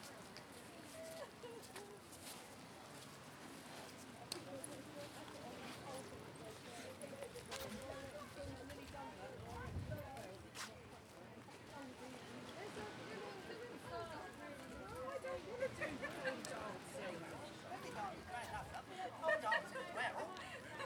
S W Coast Path, Swanage, UK - Swanage Seafront Soundwalk
A short soundwalk from an amusement arcade north upwards along the promenade, past rows of beach huts and ending at the small pier at the location marked on the map. (Tascam DR-05 with windshield)
August 2017